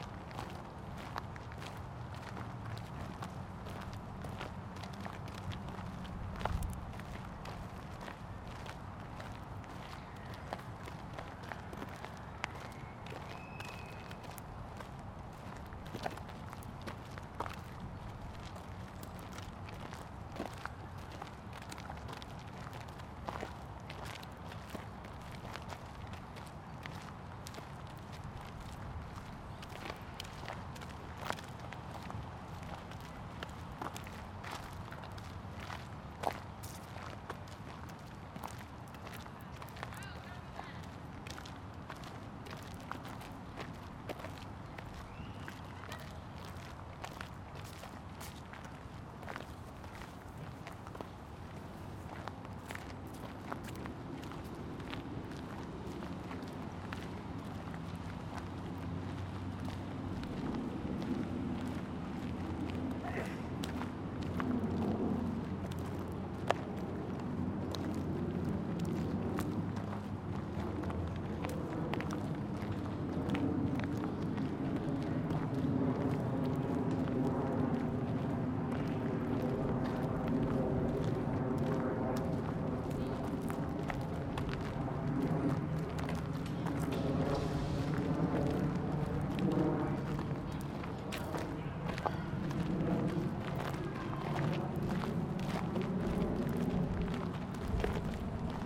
Woodland Park, Seattle WA
Part two of soundwalk in Woodland Park for World Listening Day in Seattle Washington.